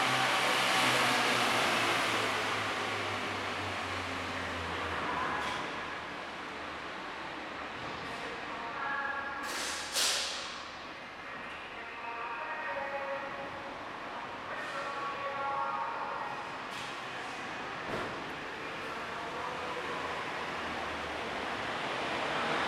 L'Aquila, Centro comm. L'Aquilone - 2017-06-08 04-L'Aquilone